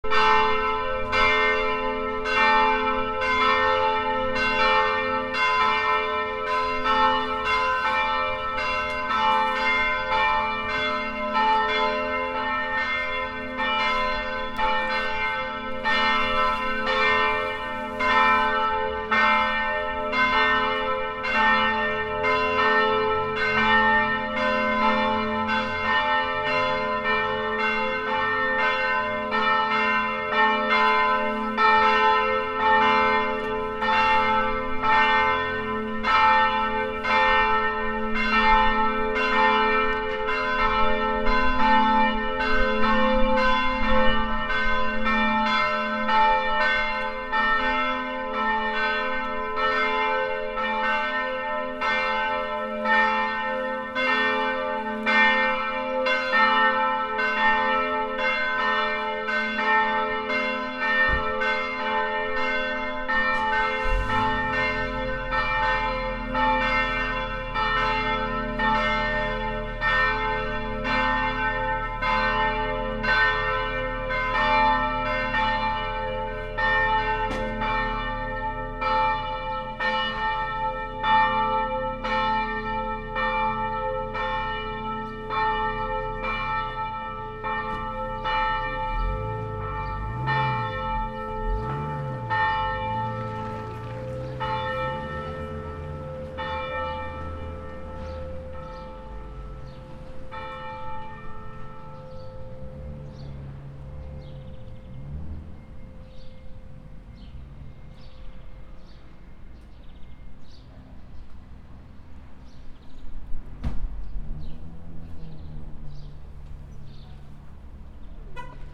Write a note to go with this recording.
Saturday morning at the square in front of the big church. Sony PCM -D100